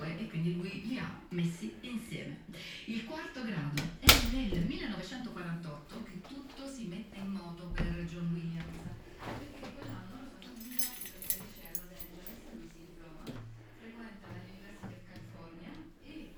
Ascolto il tuo cuore, città. I listen to your heart, city. Several chapters **SCROLL DOWN FOR ALL RECORDINGS** - Evening walk with bottles in the garbage bin in the time of COVID19 Soundwalk
"Evening walk with bottles in the garbage bin in the time of COVID19" Soundwalk
Chapter XCVII of Ascolto il tuo cuore, città. I listen to your heart, city
Thursday, June 4th 2020. Short walk in San Salvario district including discard of bottles waste, eighty-six days after (but day thirty-two of Phase II and day nineteen of Phase IIB and day thirteen of Phase IIC) of emergency disposition due to the epidemic of COVID19.
Start at 6:01 p.m. end at 6:24 p.m. duration of recording 22'45''
The entire path is associated with a synchronized GPS track recorded in the (kml, gpx, kmz) files downloadable here: